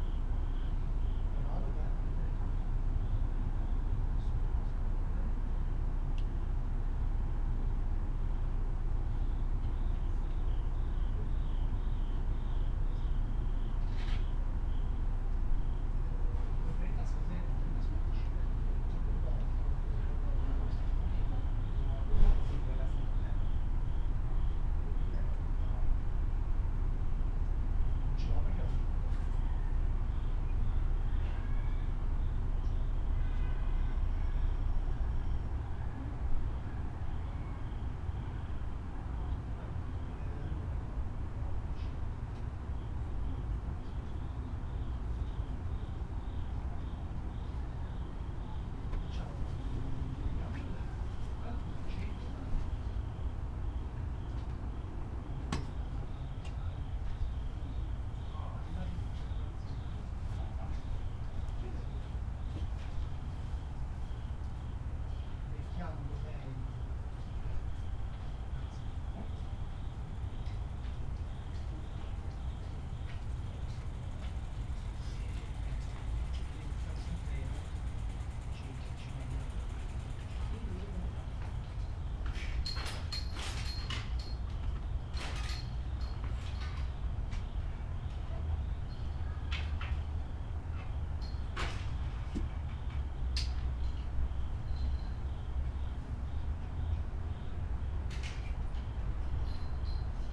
wooden walls atmosphere, italian neighbors, bicyclist outside, night crickets, passers by